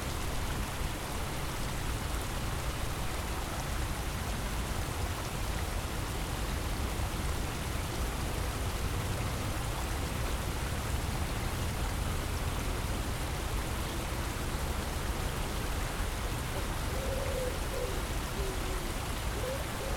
{"title": "Musée Albert-Kahn, Rue du Port, Boulogne-Billancourt, France - Albert-Kahn's Garden 2", "date": "2014-07-31 17:40:00", "description": "recorded w/ Zoom H4n", "latitude": "48.84", "longitude": "2.23", "altitude": "33", "timezone": "Europe/Paris"}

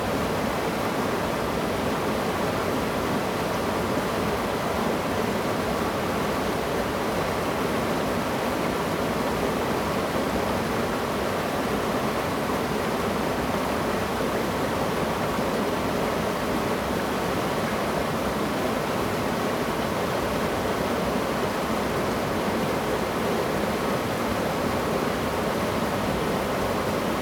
{"title": "NanShan River, 仁愛鄉 Nantou County - stream", "date": "2016-12-13 10:54:00", "description": "stream\nZoom H2n MS+ XY", "latitude": "24.02", "longitude": "121.09", "altitude": "831", "timezone": "GMT+1"}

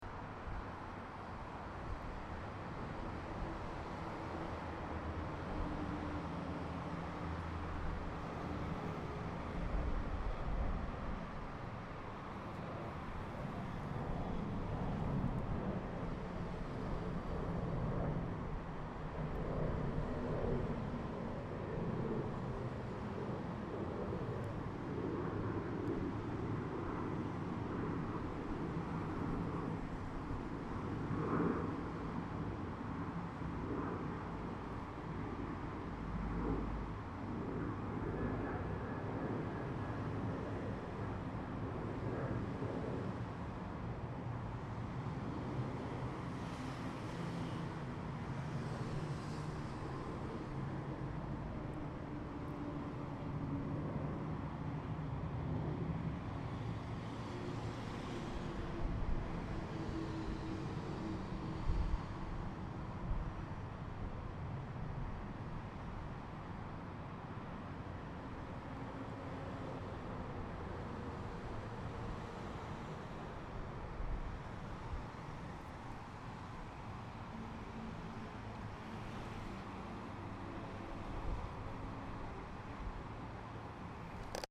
{"title": "Jesse Cohen, Holon, Israel - Bridge Above Ayalon Highway", "date": "2016-01-10 15:00:00", "description": "Traffic noises and airplane. Recorded with Zoom H2.", "latitude": "32.01", "longitude": "34.76", "altitude": "23", "timezone": "Asia/Jerusalem"}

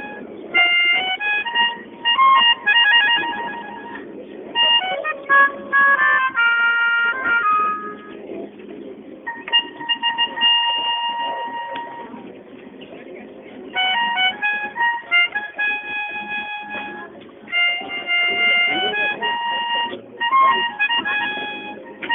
{"description": "13.04.2008 13:50, Sunday afternoon, a fat man sits at the quayside and hits and misses his way through popular tunes.", "latitude": "52.49", "longitude": "13.46", "altitude": "31", "timezone": "GMT+1"}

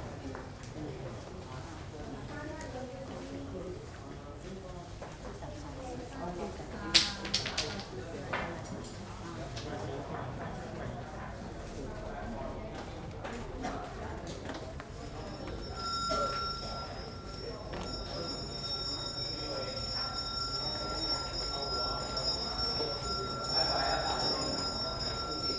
{"title": "Pulau Pinang, George Town, Penang, Malaysia - drone log 23/02/2013 a", "date": "2013-02-23 13:12:00", "description": "Goddes of Mercy Temple, Kau Cim oracle sticks, bells\n(zoom h2, binaural)", "latitude": "5.42", "longitude": "100.34", "altitude": "11", "timezone": "Asia/Kuala_Lumpur"}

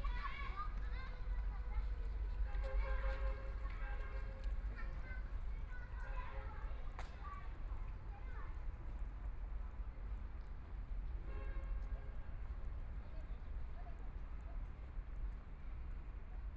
{"title": "黃興公園, Shanghai - walking in the park", "date": "2013-11-22 17:00:00", "description": "Walking inside the park amusement park, Binaural recording, Zoom H6+ Soundman OKM II ( SoundMap20131122- 5 )", "latitude": "31.30", "longitude": "121.53", "altitude": "3", "timezone": "Asia/Shanghai"}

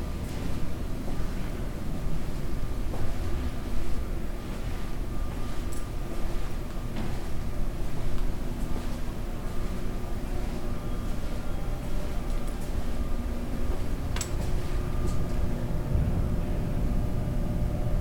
{"title": "University of Colorado Boulder, Regent Drive, Boulder, CO, USA - Third floor of UMC", "date": "2013-02-01 16:30:00", "latitude": "40.01", "longitude": "-105.27", "altitude": "1650", "timezone": "America/Denver"}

